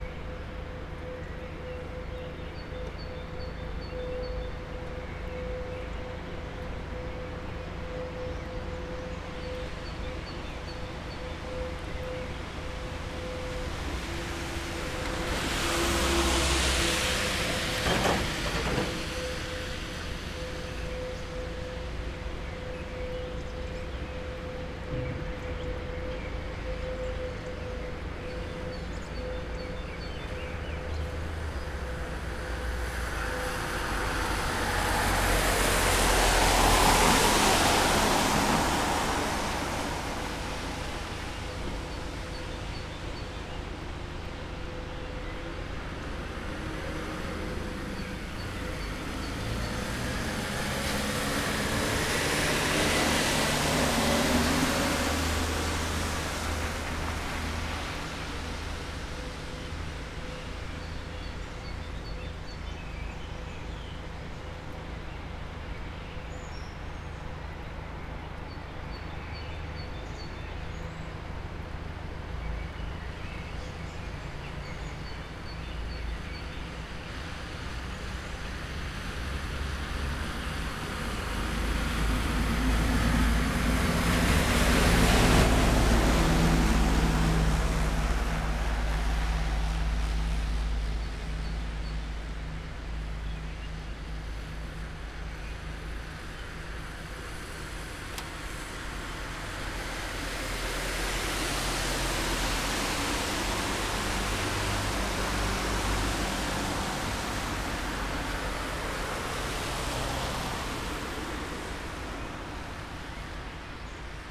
Innstraße, Innsbruck, Österreich - Morgenstimmung vor dem Haus mit Regen
vogelweide, waltherpark, st. Nikolaus, mariahilf, innsbruck, stadtpotentiale 2017, bird lab, mapping waltherpark realities, kulturverein vogelweide, morgenstimmung vogelgezwitscher, autos auf nasser fahrbahn
Innsbruck, Austria